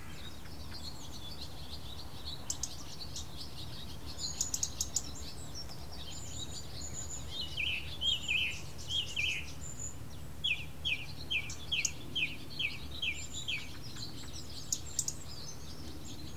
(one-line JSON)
{"title": "Hambledon Hill View Campsite, Hammoon, Sturminster Newton, UK - Early morning in the field", "date": "2017-07-22 08:10:00", "description": "Faint sound of cars somewhere, plenty of birds, cock crowing and not much else.", "latitude": "50.93", "longitude": "-2.26", "altitude": "45", "timezone": "Europe/London"}